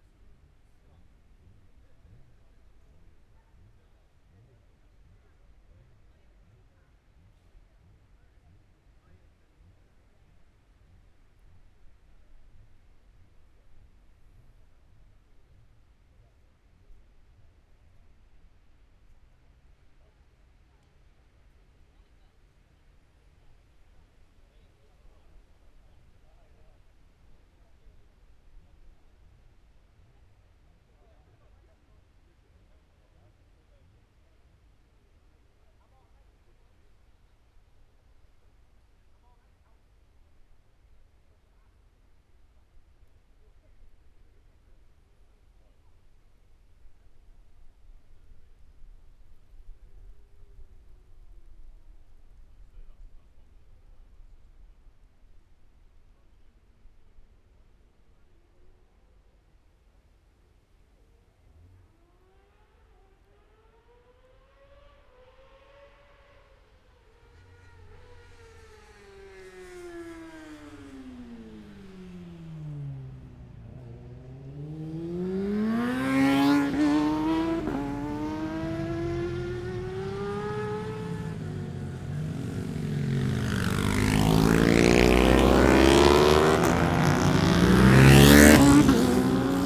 Scarborough District, UK - Motorcycle Road Racing 2016 ... Gold Cup ...
Sighting laps ... Mere Hairpin ... Oliver's Mount ... Scarborough ... open lavalier mics clipped to baseball cap ...